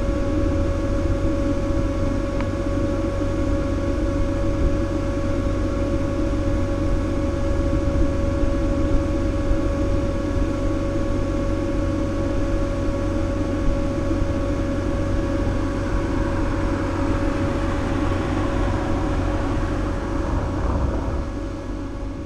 another maribor2012 inflated globe, this one on the banks of the river.
Maribor, Slovenia